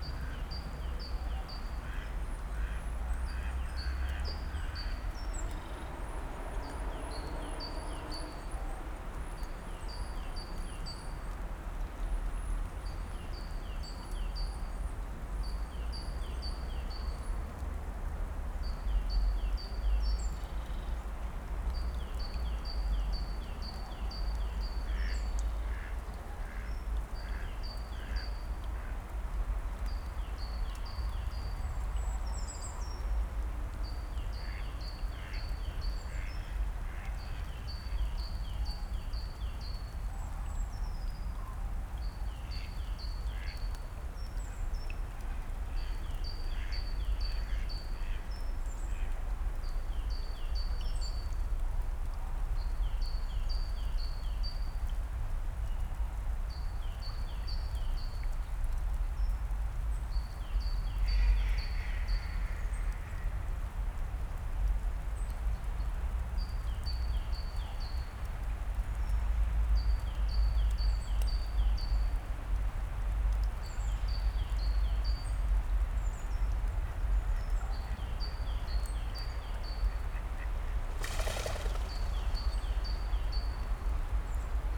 Schloßpark Buch, Berlin - park ambience, late winter afternoon
Schloßpark Buch, park ambience, ducks, tits, woodpecker, traffic
(Sony PCM D50, DPA4060)